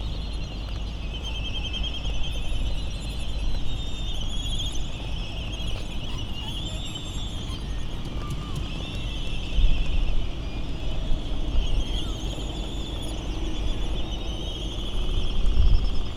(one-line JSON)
{"title": "United States Minor Outlying Islands - Laysan albatross soundscape ...", "date": "2012-03-19 15:35:00", "description": "Laysan albatross soundscape ... Sand Island ... Midway Atoll ... recorded in the lee of the Battle of Midway National Monument ... open lavalier mics either side of a furry covered table tennis bat used as a baffle ... laysan albatross calls and bill rattling ... very ... very windy ... some windblast and island traffic noise ...", "latitude": "28.21", "longitude": "-177.38", "altitude": "10", "timezone": "Pacific/Midway"}